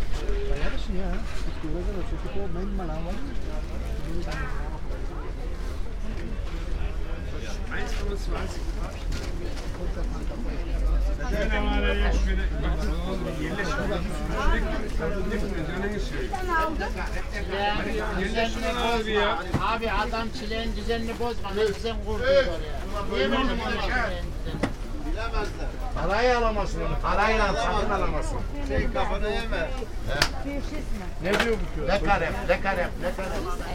Maybachufer, weekly market - market walking
Maybachufer market walk, spring Tuesday, sunny but nor warm, rather quiet market, i.e. not so much sellers shouting.
field radio - an ongoing experiment and exploration of affective geographies and new practices in sound art and radio.
(Tascam iXJ2/iPhoneSE, Primo EM172)
Berlin, Deutschland, 7 May 2019